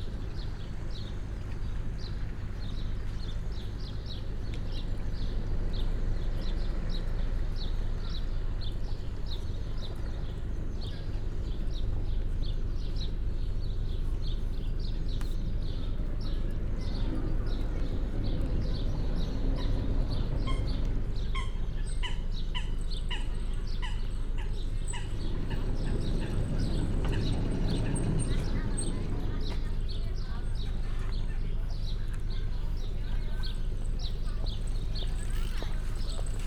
Grünau, Berlin, Deutschland - BVG ferry station
Grünau, pier of a Berlin public transport ferry station, boat arrives, people with bikes entering, pier ambience
(SD702, DPA4060)